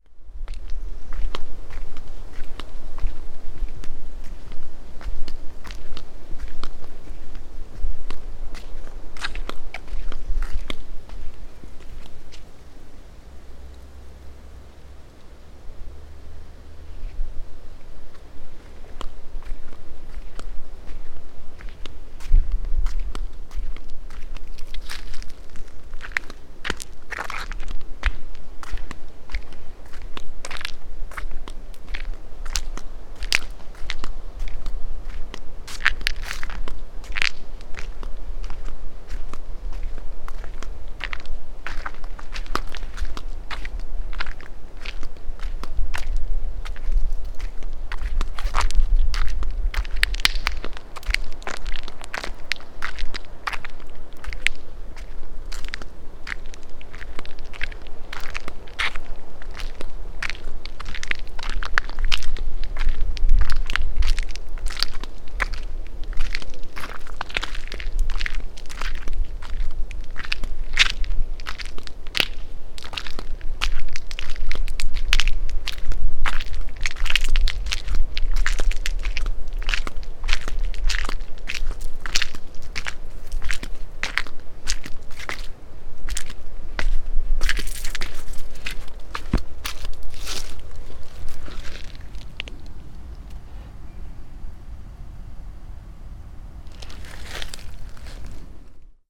quarry, Marušići, Croatia - void voices - stony chambers of exploitation - steps
a walk on stony platform, filled with sharp-edged small stones